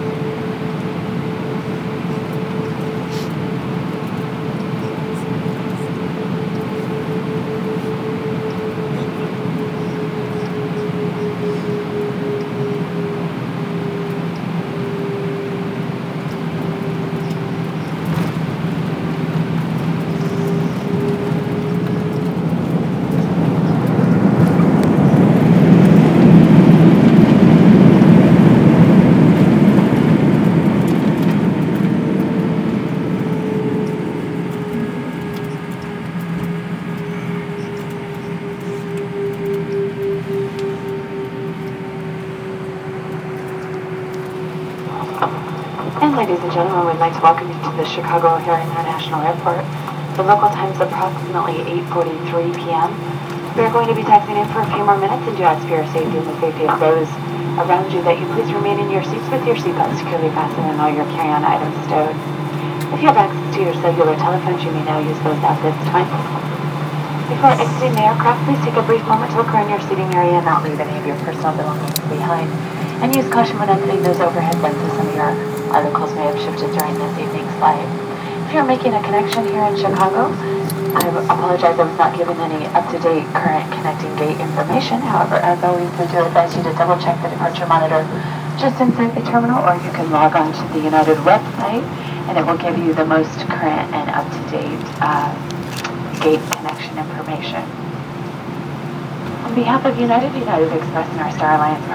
Chicago O'Hare International Airport (ORD), Chicago, IL, USA - Landing on Feb 16 2013
Smooth Landing at Chicago O'Hare airport on Feb 16 2013 on flight from New York